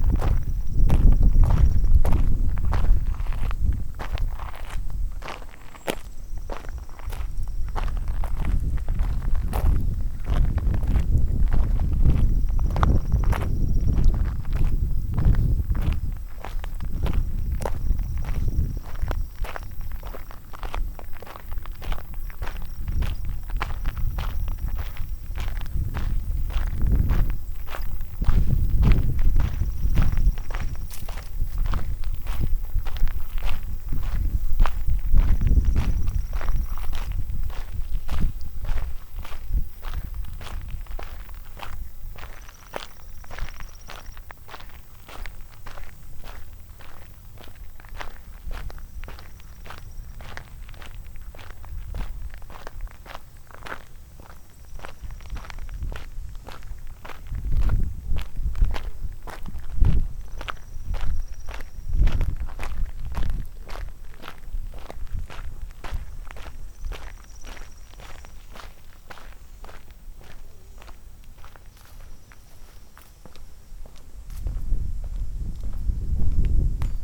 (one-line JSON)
{"title": "Grass Lake Sanctuary - Driveway Soundwalk", "date": "2010-07-18 03:41:00", "description": "These are the sounds of me opening and closing the mailbox on Grass Lake Road, and then walking up the long driveway, to the house where the Sanctuary's caretaker lives. At the end of the recording, you hear me ring the doorbell.\nWLD, Grass Lake Sanctuary, field recording, Tom Mansell", "latitude": "42.24", "longitude": "-84.06", "altitude": "305", "timezone": "America/Detroit"}